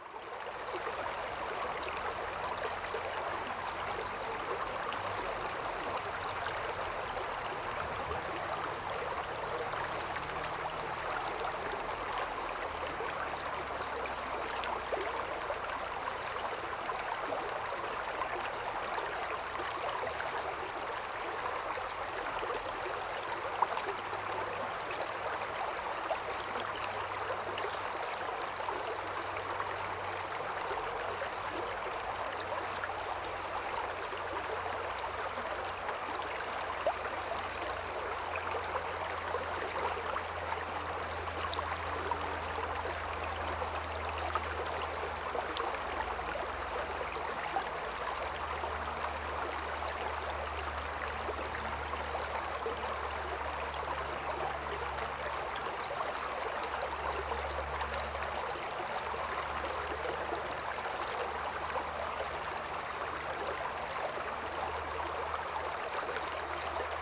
The sound of water streams
Zoom H2n MS+XY
Puli Township, 投68鄉道73號